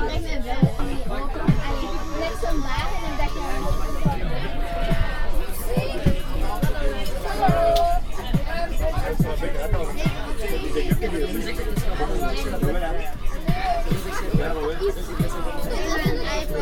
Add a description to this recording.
Is this a livestock trailer ? No no no ! This is a normal train on saturday, where scouts are playing loudly !